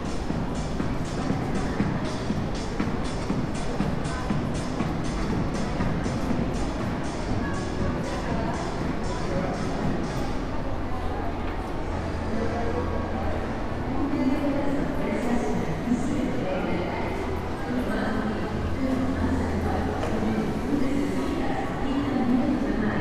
Blvd. Aeropuerto, Cerrito de Jerez Nte., León, Gto., Mexico - Centro comercial Altacia.
Altacia mall.
Walking through the corridors of the mall.
I made this recording on july 24th, 2022, at 12:00 p.m.
I used a Tascam DR-05X with its built-in microphones and a Tascam WS-11 windshield.
Original Recording:
Type: Stereo
Caminando por los pasillos del centro comercial.
Esta grabación la hice el 24 de julio 2022 a las 12:00 horas.